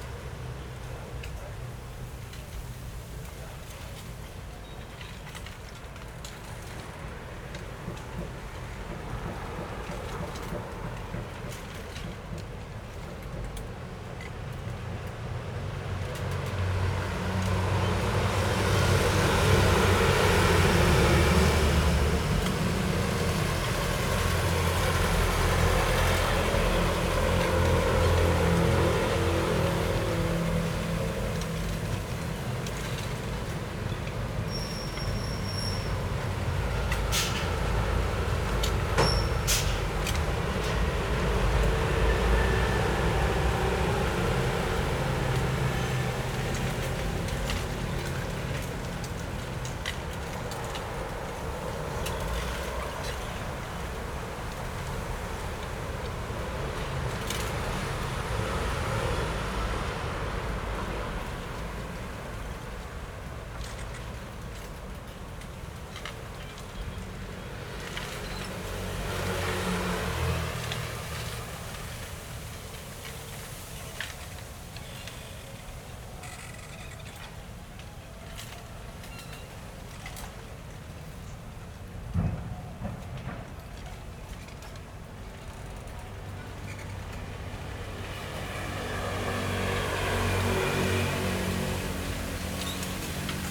Zhongzheng 2nd Rd., Yingge Dist., New Taipei City - In the bamboo forest
In the bamboo forest, Traffic Sound, Traveling by train
Zoom H4n XY+Rode NT4